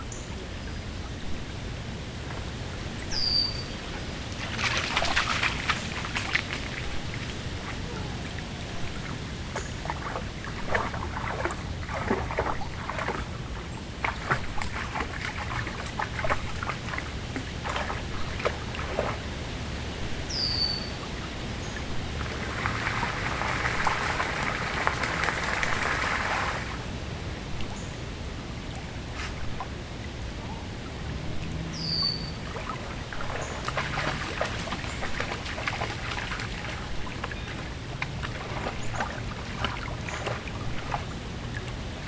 Recorded in the middle of Taylor-Massey Creek. Sounds of birds (mainly red-winged blackbirds), dogs, the creek, occasional passers-by on the recreational trail, susurration of leaves.